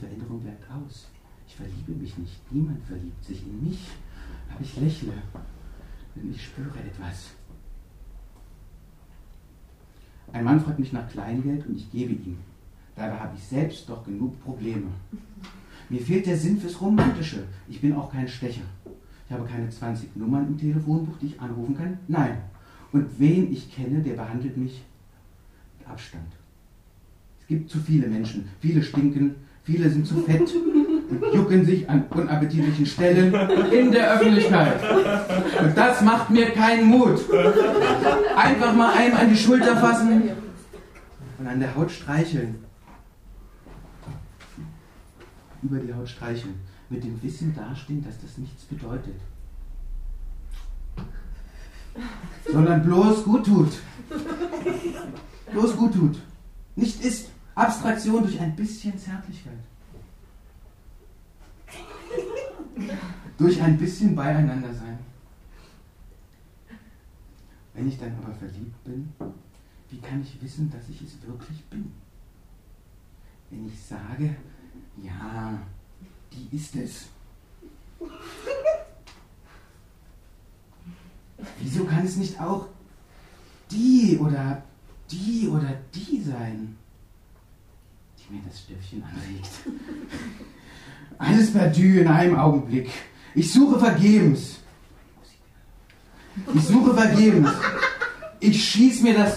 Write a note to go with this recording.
A seventh time have we come together to gather all our wicked letters becoming texts. It turns out, DER KANAL is becoming more and more an orphanage of unread poems. Presented are two extracts from the six hour lecture held in decembre. The first text is entitled ::Glücklich werden::